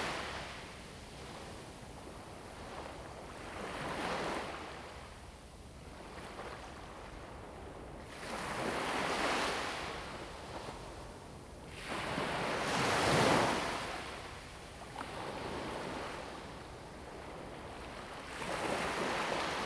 隘門沙灘, Huxi Township - the waves
In the beach, Sound of the waves
Zoom H2n MS +XY